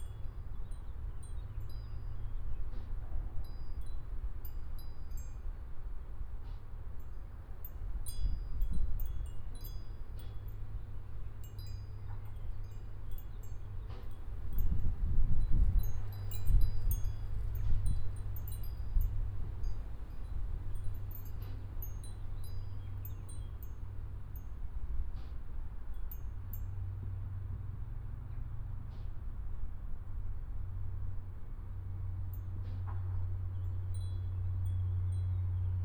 {"title": "Begraafplaats Sint Barbara, Laak, Niederlande - wind chimes at a grave in spring", "date": "2012-05-25 12:15:00", "description": "Chimes, birds, wind, backdrop of traffic. Part of Binckhorst Mapping Group. Binaural recording with Soundman OKM Klassik II.", "latitude": "52.07", "longitude": "4.34", "timezone": "Europe/Amsterdam"}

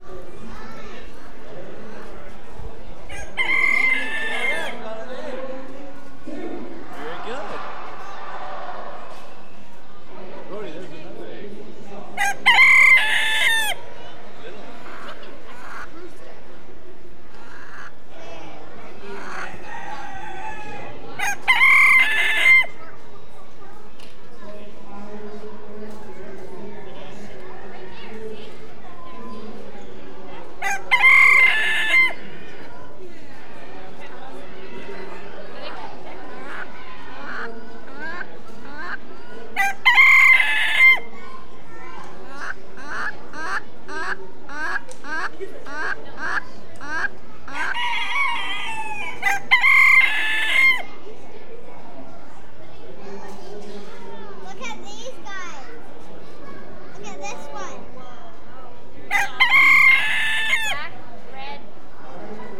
{"title": "Pacific National Exhibition, E Hastings St, Vancouver, BC, Canada - Chickens!", "date": "2008-08-25 01:02:00", "description": "Location: The agriculture barn at the Pacific National Exhibition.\nRecorder: Meri von KleinSmid.\nEquipment: Sony MZ-R70 and binaural mics.", "latitude": "49.28", "longitude": "-123.04", "altitude": "48", "timezone": "America/Vancouver"}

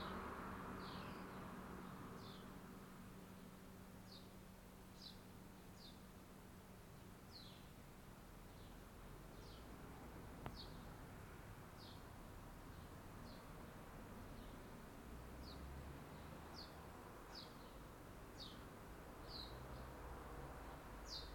{"title": "Ven. de la Geôle, Sauveterre-la-Lémance, France - Bells at 12.00 – Cloches de midi.", "date": "2022-08-24 11:55:00", "description": "Insectes, oiseaux (hirondelles et pigeons) voitures distantes, cloches.\nInsects, birds (sparrows and pigeons) distant cars, bells.\nTech Note : SP-TFB-2 binaural microphones → Sony PCM-M10, listen with headphones.", "latitude": "44.59", "longitude": "1.01", "altitude": "122", "timezone": "Europe/Paris"}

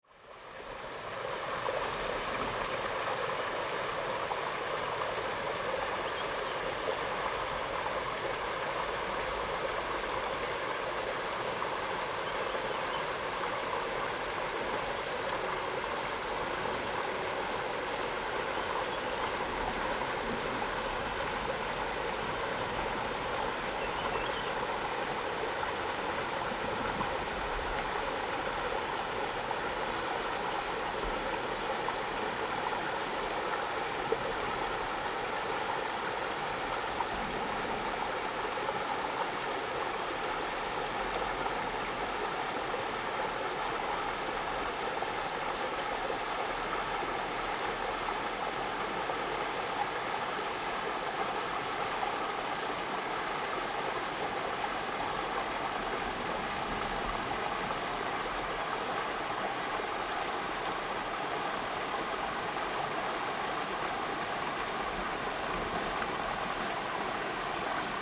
{"title": "TaoMi River, 紙寮坑桃米里 - The sound of the river", "date": "2016-07-27 15:58:00", "description": "Insect sounds, The sound of the river, The sound of thunder\nZoom H2n MS+XY +Spatial audio", "latitude": "23.94", "longitude": "120.93", "altitude": "494", "timezone": "Asia/Taipei"}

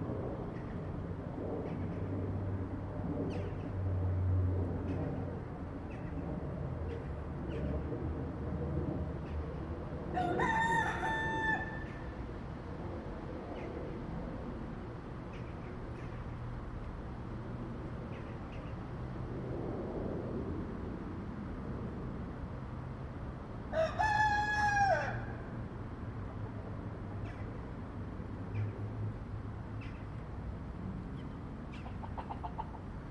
{"title": "Binckhorst, Den Haag - Binckhorst Cockerels", "date": "2022-05-22 14:52:00", "description": "Wild chickens live in the industrial area of the Binckhorst, Den Haag. Zoom HnN Spatial Audio (Binaural decode)", "latitude": "52.07", "longitude": "4.33", "altitude": "1", "timezone": "Europe/Amsterdam"}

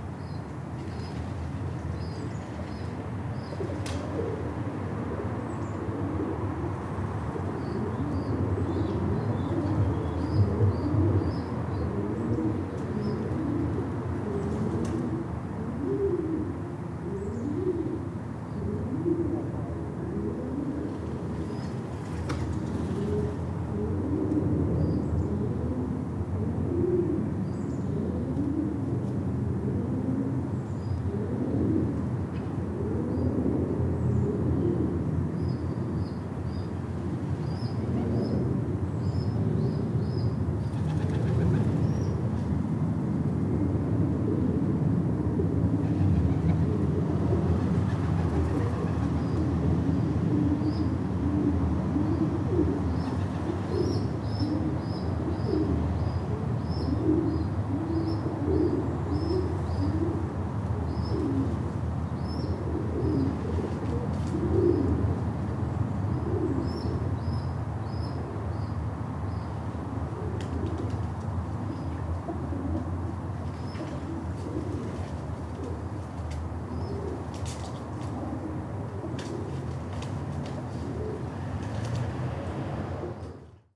{"title": "Under a bridge, Katesgrove, Reading, UK - Listening to the pigeons", "date": "2015-02-08 14:31:00", "description": "I always enjoy the coos and flutters of the pigeons living under the bridge on my way into town. I decided to pause and record the squeaking of the babies, the fluttering and cooing of the adults. In this recording I am standing under the bridge (hence traffic rumble) and my EDIROL R-09 is perched inside a new waste-paper basket that I just bought. I put the EDIROL R-09 in there because I didn't want lots of sounds of me rustling about in the recording.", "latitude": "51.45", "longitude": "-0.97", "altitude": "39", "timezone": "Europe/London"}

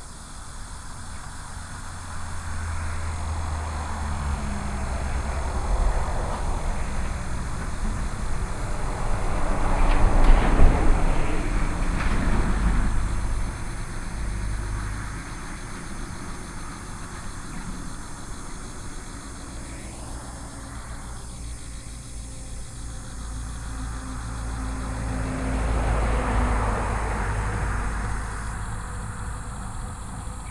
{"title": "貢寮區福隆村, New Taipei City - Night road", "date": "2014-07-29 18:50:00", "description": "Night road, Traffic Sound, Cicadas", "latitude": "25.02", "longitude": "121.94", "altitude": "19", "timezone": "Asia/Taipei"}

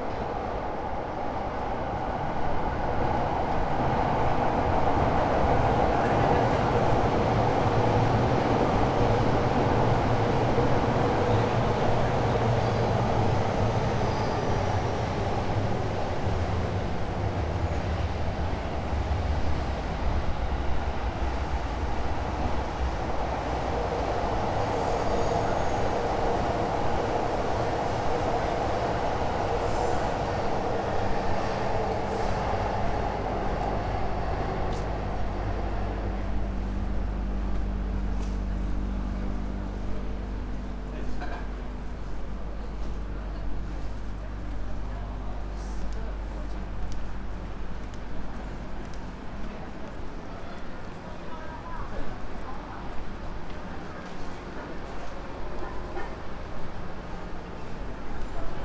{"title": "ameyoko street, ueno station, tokyo - fish market, under JR railway tracks", "date": "2013-11-09 21:23:00", "description": "late evening, small fish restaurants, roaring trains", "latitude": "35.71", "longitude": "139.77", "altitude": "18", "timezone": "Asia/Tokyo"}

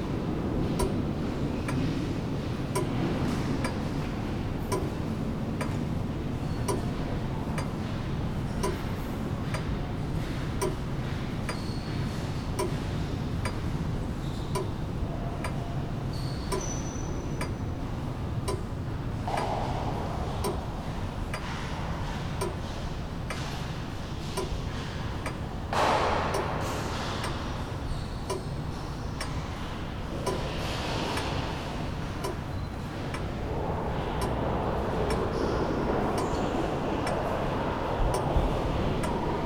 {"title": "Heraklion, downtown, Agios Minas Cathedral - grandfathers clock", "date": "2012-09-28 12:16:00", "description": "a clock ticking away in the cathedral's main hall.", "latitude": "35.34", "longitude": "25.13", "altitude": "30", "timezone": "Europe/Athens"}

